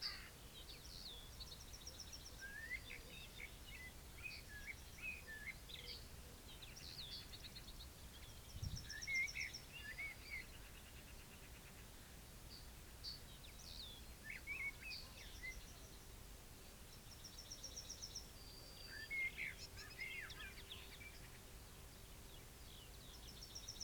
Luttons, UK - Song thrush ride thru ...
Song thrush song soundscape ... until after 11 mins ... ish ... pair of horse riders pass through ... bird calls and song from ... song thrush ... yellowhammer ... blackbird ... corn bunting ... chaffinch ... dunnock ... red-legged partridge ... crow ... rook ... open lavaliers mics clipped to hedgerow ... one swear word ... background noise from sheep and traffic ...